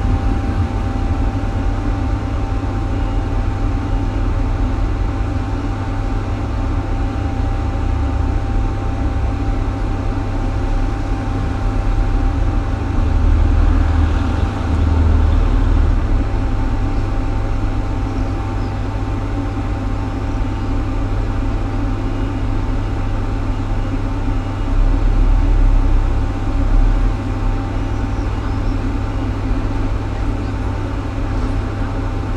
slomškov trg, Maribor, Slovenia - Maribor2012 landmark: cona c